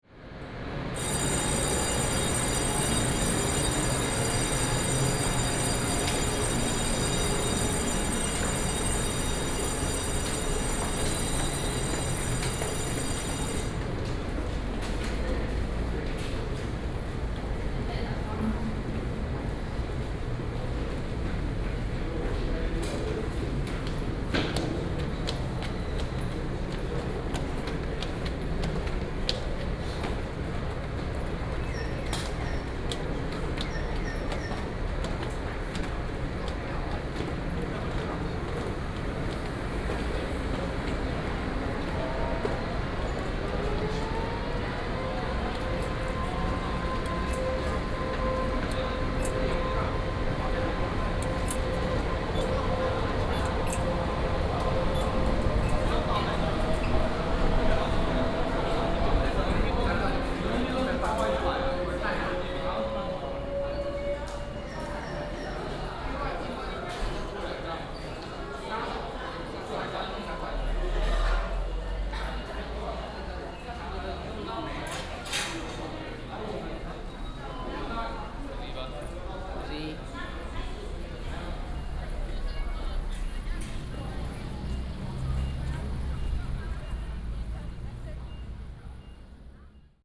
Walking at the station, Walk towards the station exit, Footsteps, The train leaves the station
Dajia District, Taichung City, Taiwan, 19 January 2017, 10:47